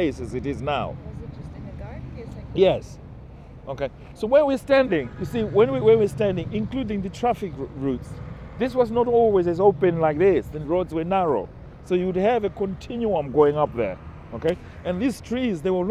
Coldharbour Lane, Brixton, London Borough of Lambeth, London, UK - Walking the turf...
We are walking down Coldhabour Lane and across Brixton Market with the writer George Shire. He takes photographs. I fixed a bin-aural mic on his shirt… capturing his descriptions, memories and thoughts… an audio-walk through Brixton and its histories, the up-rise of black culture in the UK…
the recording is part of the NO-GO-Zones audio radio project and its collection: